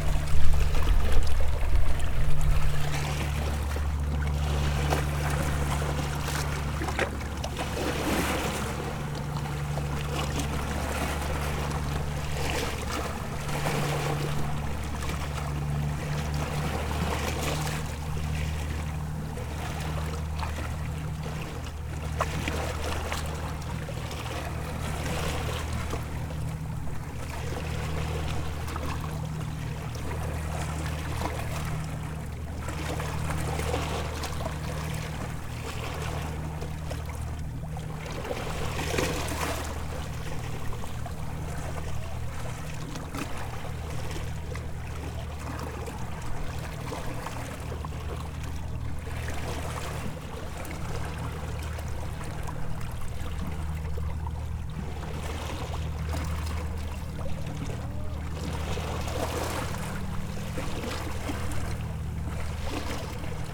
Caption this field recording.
Waves washing on the shore in Korcula island, Croatia, near the port.